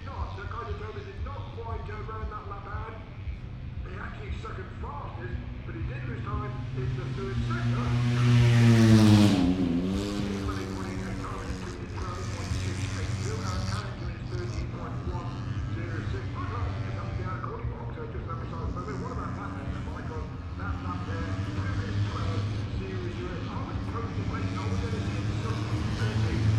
Silverstone Circuit, Towcester, UK - british motorcycle grand prix 2019 ... moto three ... fp1 contd ...
british motorcycle grand prix 2019 ... moto three ... free practice one ...contd ... inside maggotts ... some commentary ... lavalier mics clipped to bag ... background noise ... the disco in the entertainment zone ..?
East Midlands, England, UK, 23 August